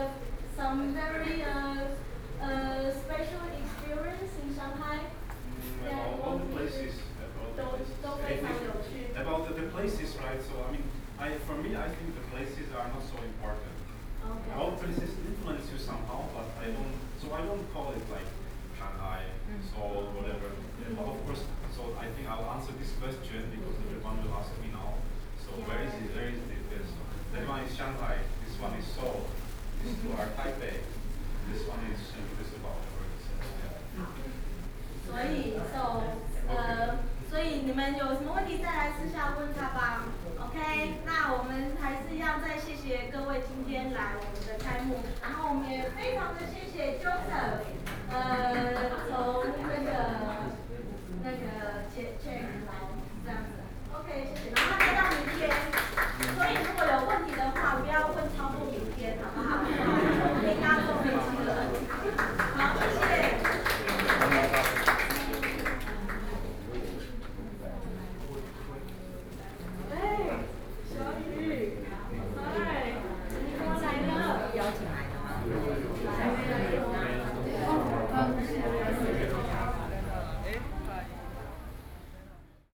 tamtamART.Taipei, Taipei City - openning
Exhibition Opening, Artists are introducing his own creations, Sony PCM D50 + Soundman OKM II